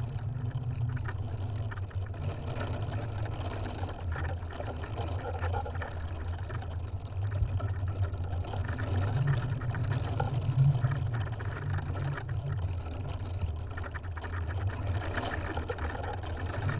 Tempelhofer Feld, Berlin - poplar leaves in wind
poplar leaves in the wind, recorded with a contact microphone.
(PCM D50, DIY contact mics)